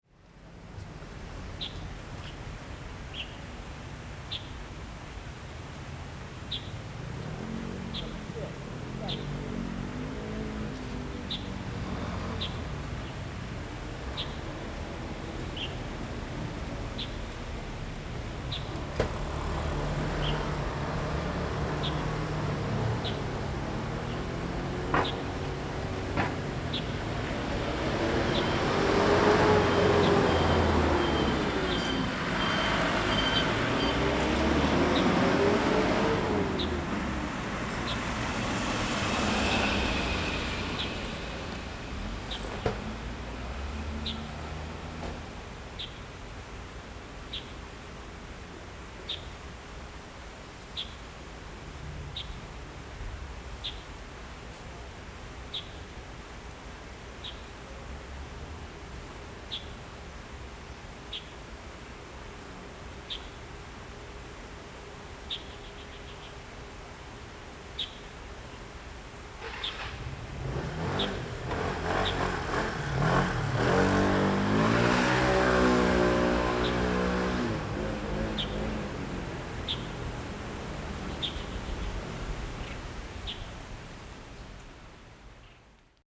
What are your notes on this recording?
Birds and Traffic Sound, Zoom H4n+Rode NT4